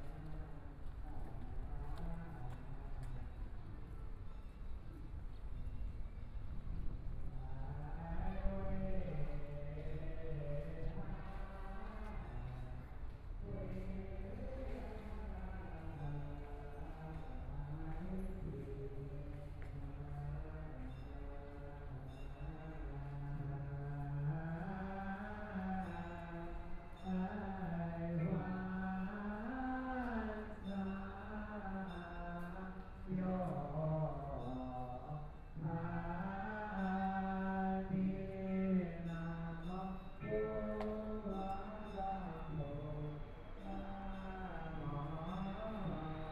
臨濟護國禪寺, Taipei City - Walking in the temple
Walking in the temple, Chanting voices, Aircraft flying through, Birds singing, Binaural recordings, Zoom H4n+ Soundman OKM II
Taipei City, Taiwan, 8 February 2014, 16:03